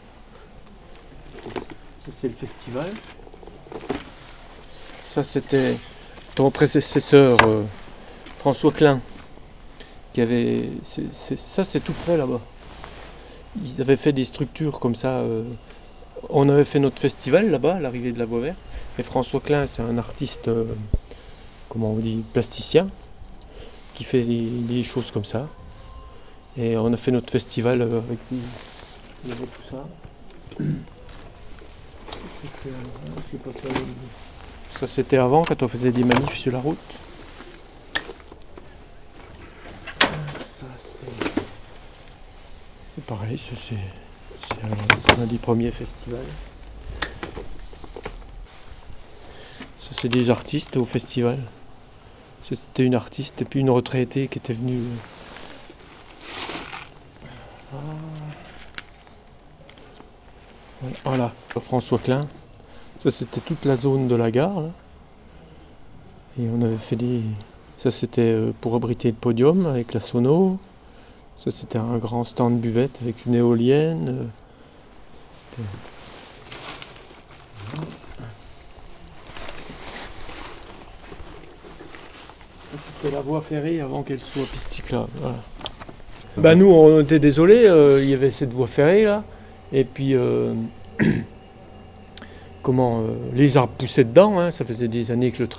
L'association BALLAST - Cornimont, France
L'association BALLAST, créée en 1993, a rendu possible, par de multiples actions, la transformation en Voie Verte de l'ancienne voie ferrée Remiremont-Cornimont dans la vallée de la Moselotte. Devenue réalité en 1999, cette Voie Verte est aujourd'hui gérée par un syndicat intercommunal